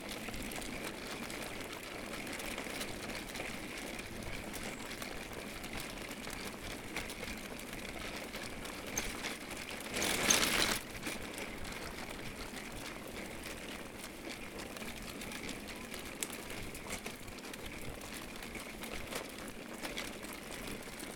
Walking around with a baby buggy. recorded with a h4n
Ulm, Germany, 26 September 2012